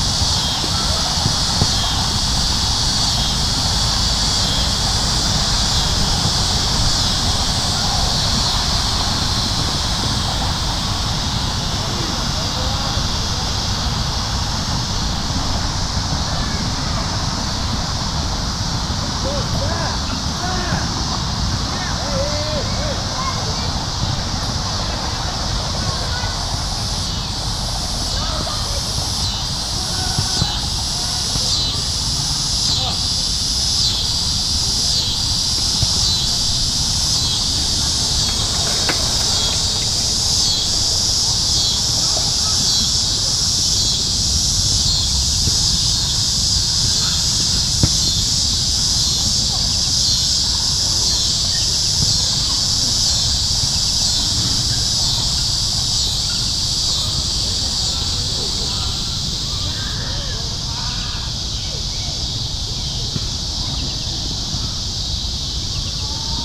Burning Bush Park Cicada Swarm
Cicada in the suburbs of Chicago, swarming. Summer 2011. Mt. Prospect, IL, insects, park, soccer game, cars, traffic
23 August 2011, ~07:00